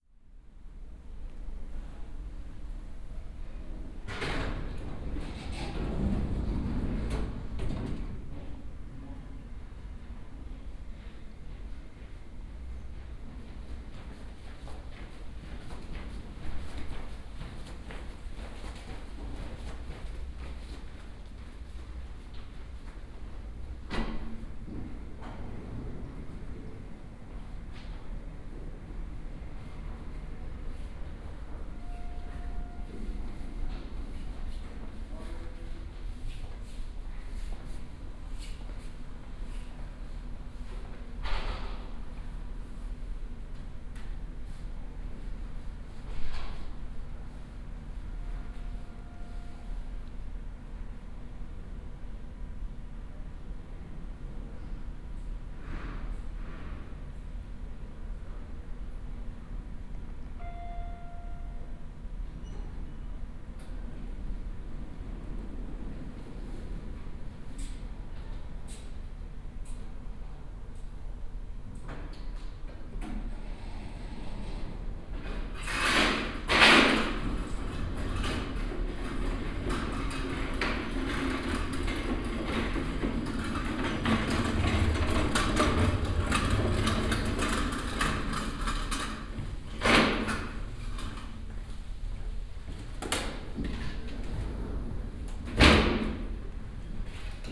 Weilburg, Kreiskrankenhaus / hospital - entrance hall ambience
Weilburg hospital entrance area ambience
(Sony PCM D50, OKMII)
Weilburg, Germany